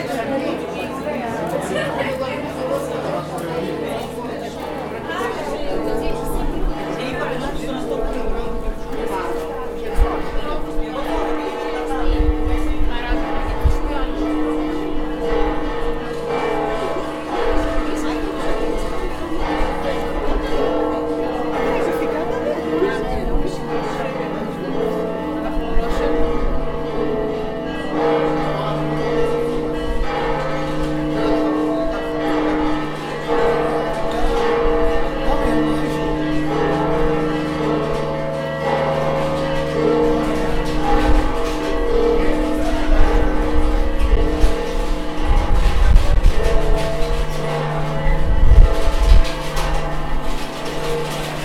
Old City of Jerusalem - Greek Orthodox Processional
Encountering a Greek Orthodox processional in the crowded market of the Old City of Jerusalem. A group of priests leading with staves pounding the ground, followed by a crowd of around 100 people as the bells toll from various churches.
מחוז ירושלים, מדינת ישראל, 1 April